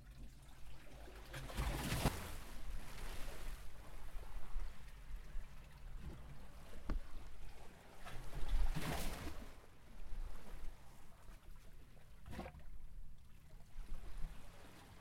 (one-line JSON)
{"title": "Marina District, San Francisco, CA, USA - Wave Organ Recording", "date": "2014-10-12 13:00:00", "latitude": "37.81", "longitude": "-122.44", "timezone": "America/Los_Angeles"}